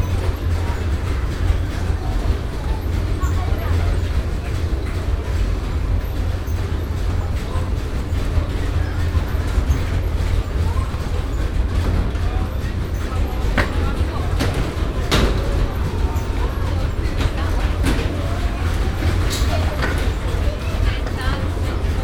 La Rochelle. Laleu airport. Baggage reclaim ambience
Luggage arriving on the baggage reclaim conveyor belt